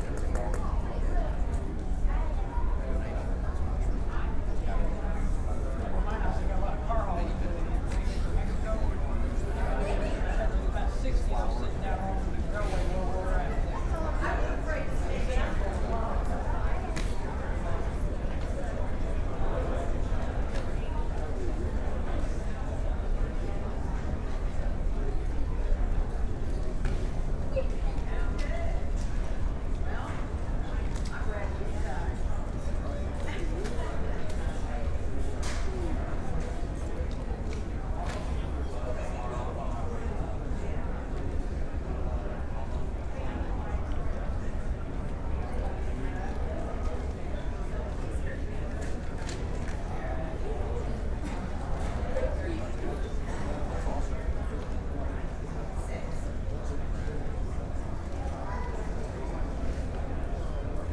Indianapolis Airport - Indianapolis Departure Lounge
Delayed at Indianapolis Airport.